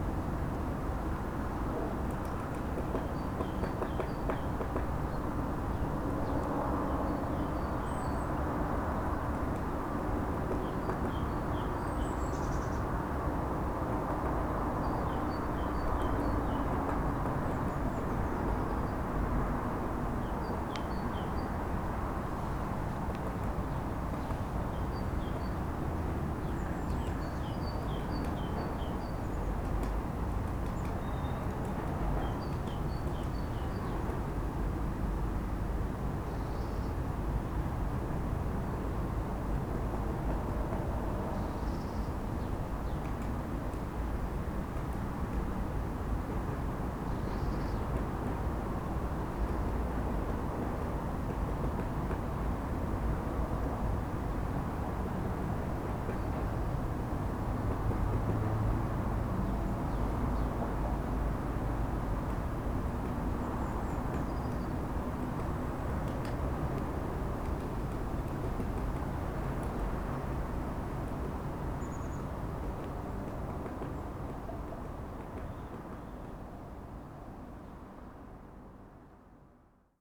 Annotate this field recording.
woodpecker begins his work, the city, the country & me: february 8, 2012